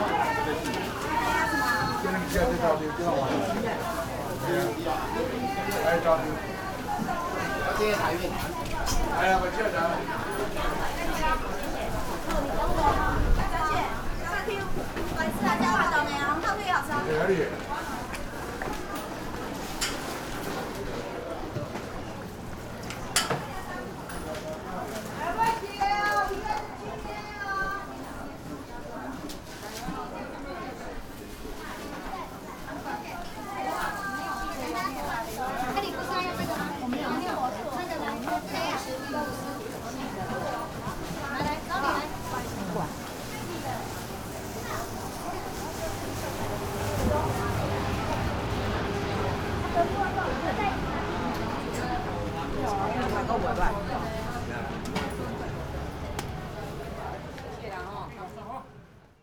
清水街, Tamsui Dist., New Taipei City - traditional market
Walking through the traditional market
Binaural recordings
Sony PCM D50 + Soundman OKM II